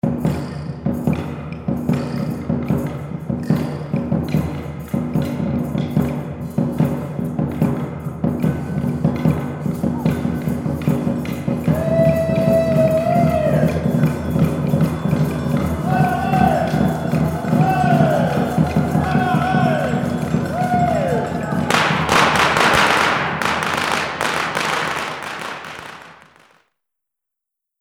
France, Prades, Year of the Tiger / L'année du Tigre - 2010 Year of the Tiger / L'année du Tigre
Happy New Year of the Tiger!